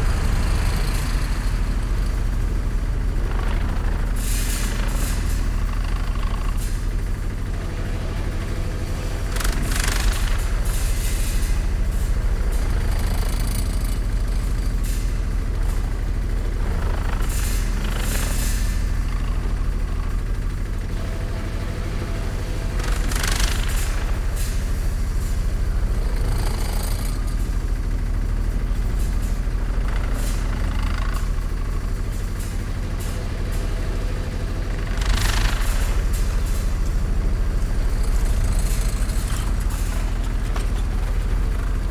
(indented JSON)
{
  "title": "Friedelstr., Neukölln, Berlin - wastewater pump rattling",
  "date": "2014-08-24 13:50:00",
  "description": "Berlin Friedelstr., construction site, wastewater pump, rattling gear\n(Sony PCM D50, DPA4060)",
  "latitude": "52.49",
  "longitude": "13.43",
  "altitude": "46",
  "timezone": "Europe/Berlin"
}